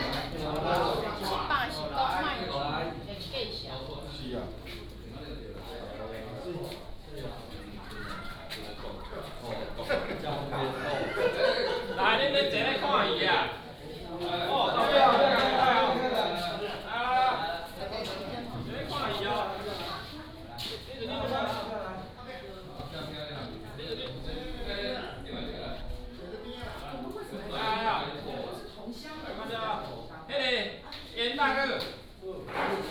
坂里大宅, Beigan Township - in the Old houses
Tourists, Old houses
13 October 2014, ~15:00